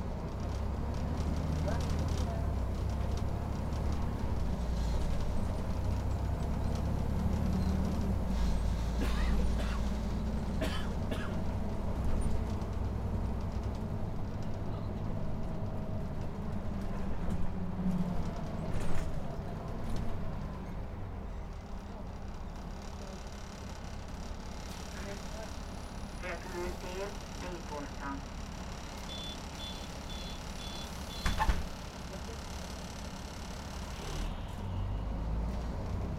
Bus 200E Budapest - Bus Ride
The Bus 200E is driving from Kobanya Kispest, the terminal station of metro line 3, to the airport. The bus is making many noises. Recorded with a Tascam DR-100.
4 December 2016, 15:10